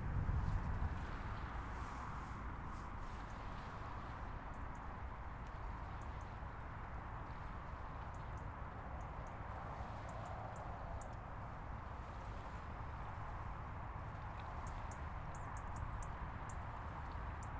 Petroleumhavenweg, Amsterdam, Nederland - Wasted Sound Alkion
With the wasted sounds project I am searching for sounds that are unheard or considered as noise.